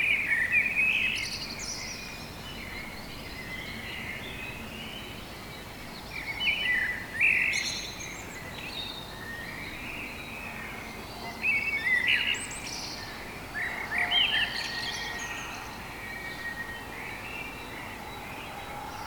August-Bebel-Straße 12, 06108 Halle (Saale), Deutschland - Saturday early Morning, birds awake, city waking up
A long early morning in the city of Halle, the recording starting at 4:29 and lasting for about 40 minutes. There is the general city hum with a Blackbird dominating over other birds, occasional cars, few people and an airplane.